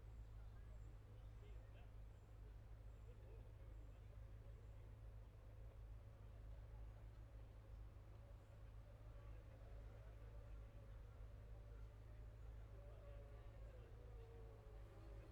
Scarborough District, UK - Motorcycle Road Racing 2016 ... Gold Cup ...
Sidecar practice ... Mere Hairpin ... Oliver's Mount ... Scarborough ... open lavalier mics clipped to baseball cap ...